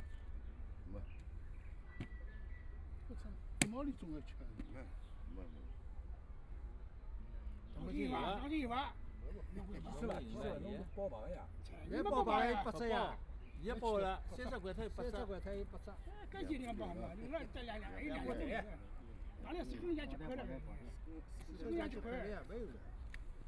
Yangpu Park - Play cards
A group of middle-aged man playing cards, Binaural recording, Zoom H6+ Soundman OKM II
26 November, 11:40